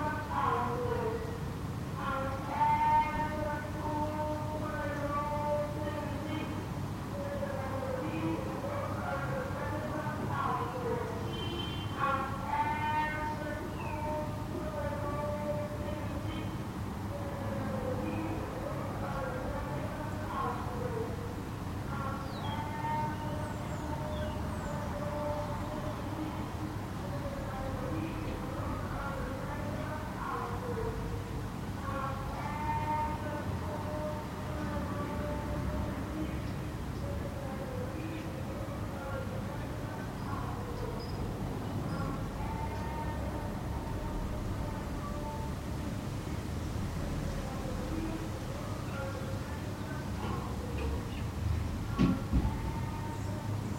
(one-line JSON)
{"title": "Mortsel, Mortsel, België - Scrap & Metal Buyers", "date": "2013-05-01 14:00:00", "description": "Scrap & Metal Buyers driving street to street", "latitude": "51.16", "longitude": "4.47", "altitude": "18", "timezone": "Europe/Brussels"}